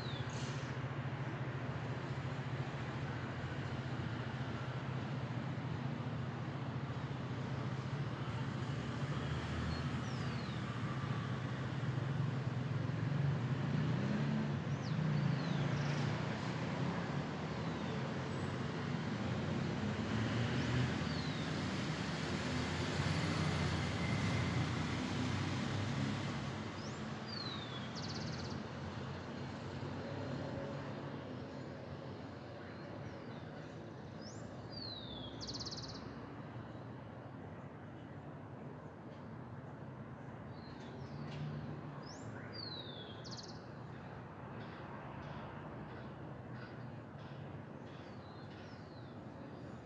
In this ambience you can hear a not so crowded park in a cul-de-sac of calle 143 con 9 en belmira en cedritos, the environment is calm and you can perceive the song of the birds.
Región Andina, Colombia